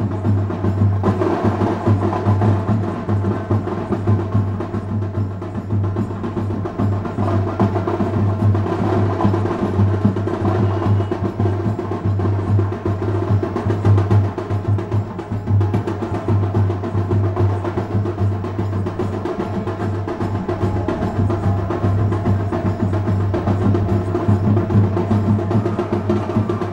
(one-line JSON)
{"title": "Raviwar Karanja, Panchavati, Nashik, Maharashtra, Inde - Drums for Durga", "date": "2015-10-12 23:39:00", "description": "People playing drums at night for the Goddess Durga.", "latitude": "20.00", "longitude": "73.79", "altitude": "582", "timezone": "Asia/Kolkata"}